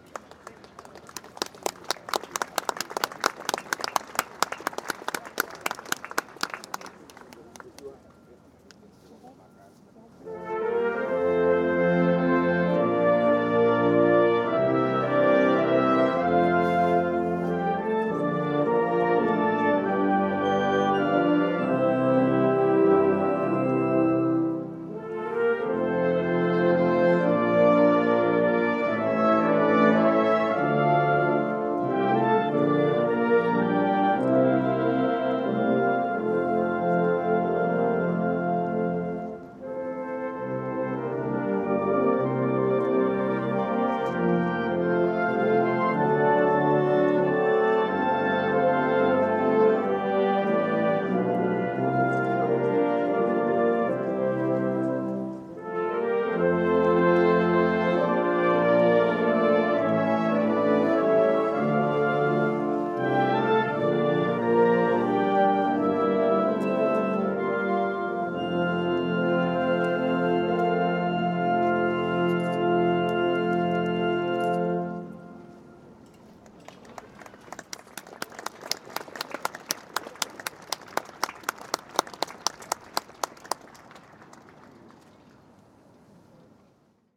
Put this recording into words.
A marching band accompanies the changing of the Royal guard at Amalienborg palace in Copenhagen. Tascam DR-100 with built in uni mics.